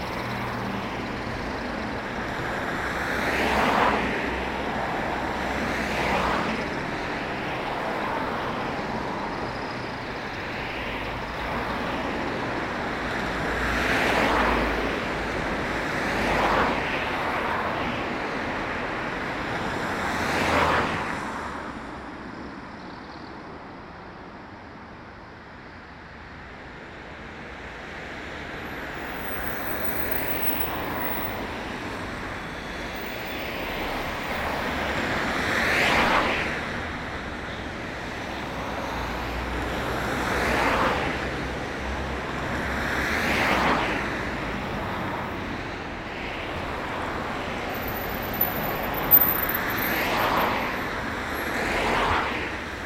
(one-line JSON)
{"title": "Rue de Frameries, Mons, Belgium - A road called hell", "date": "2017-12-02 15:00:00", "description": "Waiting for friends, I took a few time to record this road. I'm thinking about people living here. How is this possible ?", "latitude": "50.43", "longitude": "3.91", "altitude": "50", "timezone": "Europe/Brussels"}